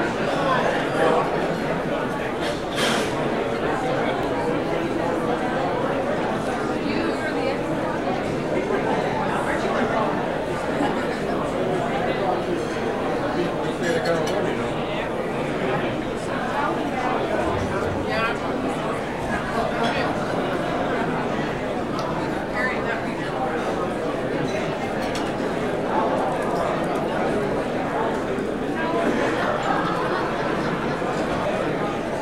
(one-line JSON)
{"title": "Safeco Cafeteria - Cafeteria", "date": "1998-10-26 11:37:00", "description": "The sound level gradually builds as a big corporate lunch room fills up. What begins as individual diners morphs into an amorphous sea of white noise, a comforting wash of undifferentiated humanity.\nMajor elements:\n* Patter\n* Dishes, glasses and silverware\n* Chairs and trays\n* Ice dispenser\n* Microwave ovens\n* A cellphone\n* One diner realizes she's being recorded", "latitude": "47.66", "longitude": "-122.31", "altitude": "62", "timezone": "America/Los_Angeles"}